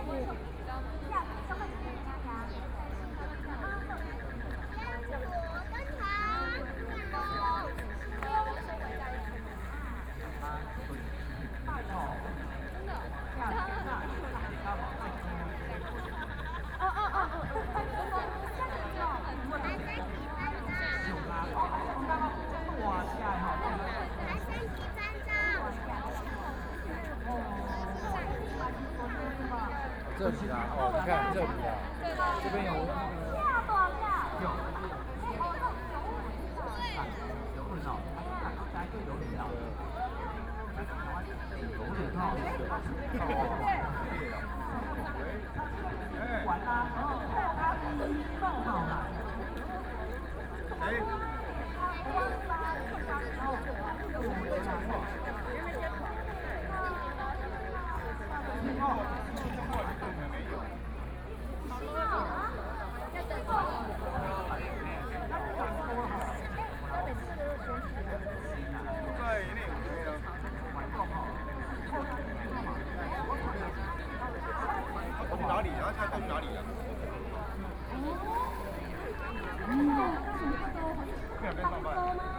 BiHu Park, Taipei City - At the lake
At the lake, A lot of people waiting for fireworks, Frogs sound, Very many people in the park, Please turn up the volume a little. Binaural recordings, Sony PCM D100+ Soundman OKM II
Neihu District, Taipei City, Taiwan, 12 April